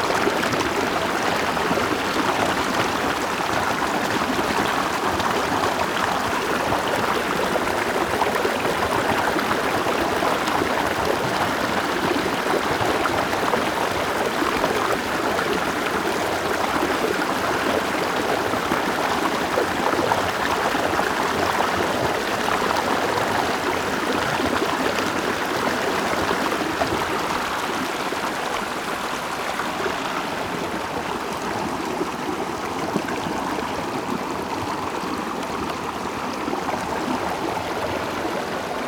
Balian River, Sec., Balian Rd. - Stream
Stream of sound
Sony PCM D50
New Taipei City, Taiwan, July 2012